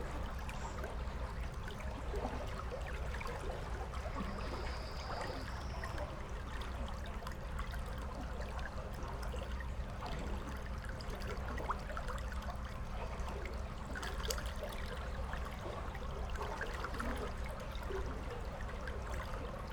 Utena, Lithuania, flooded river
after heavy rains during the last days our rivers are full of waters just like in springtime. 4 channels recording capturing the soundscape of the flooded river. 2 omnis and 2 hydrophones
16 July 2017